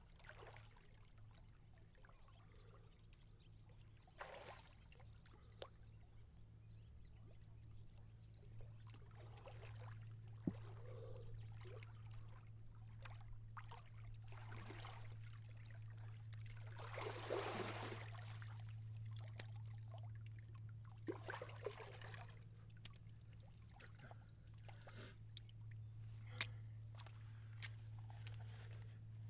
Nötö - Evening swim on Nötö
Going for a swim on the 21st of June 2021 just after 7pm just below the windmill on Nötö, in the Finnish archipelago.
21 June, 19:16